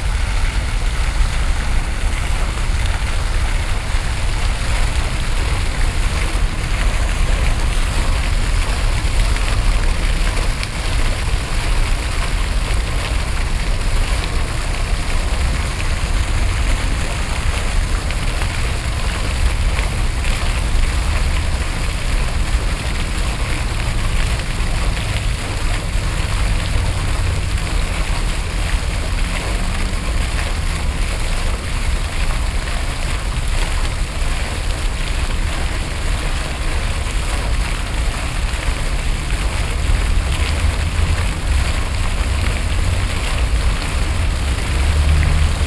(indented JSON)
{
  "date": "2011-05-25 11:18:00",
  "description": "Brussels, Jardin du Palais des Académies - The fountain.",
  "latitude": "50.84",
  "longitude": "4.37",
  "timezone": "Europe/Brussels"
}